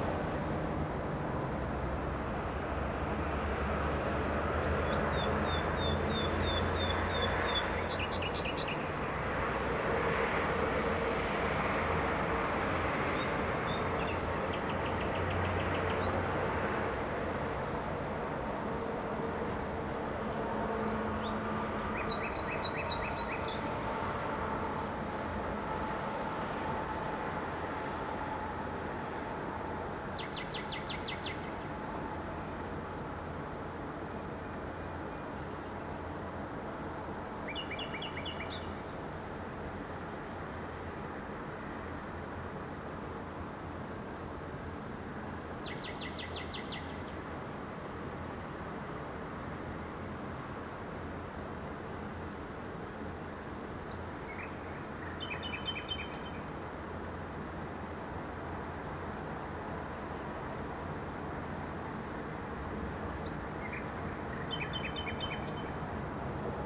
Nightingale voice audible through traffic noise reflects on scene composed of concrete buildings sorrounding sports field. Recorded from 9th floor. Recorder Olympus LS11.